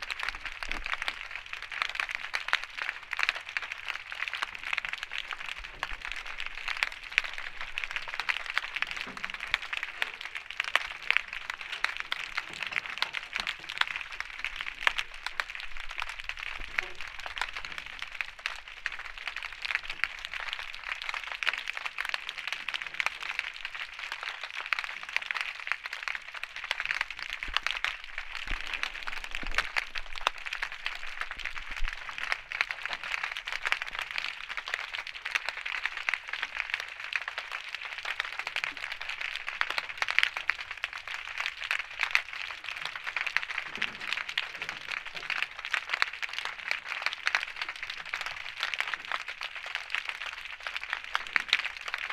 Valparaíso, Valparaíso Region, Chile - Muelle Barón, Valparaíso